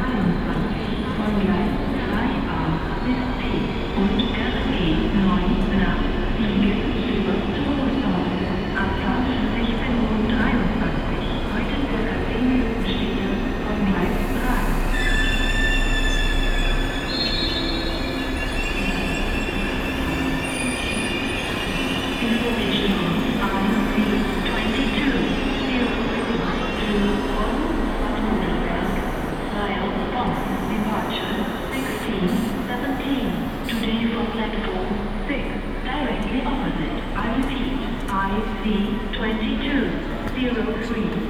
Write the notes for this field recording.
Binaural recording of general atmosphere at the platforms.